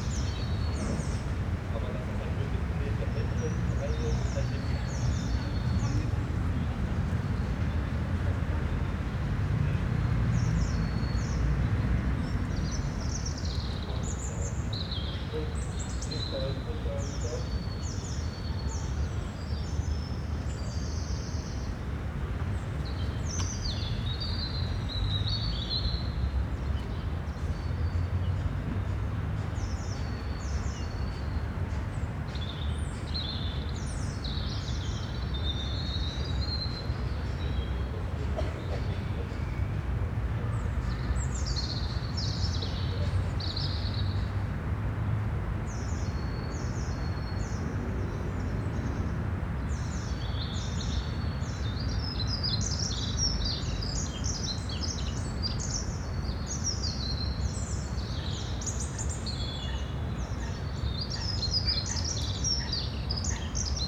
Isebek-Kanal, Kaiser-Friedrich-Ufer, Hamburg, Deutschland - canal ambience
Isebek-Grünzug, green stripe along Isebek canal, late morning in spring, ambience /w birds, siren, someone making a phone call, remote traffic, two paddlers, pedestrians
(Sony PCM D50, Primo EM172)
22 April 2022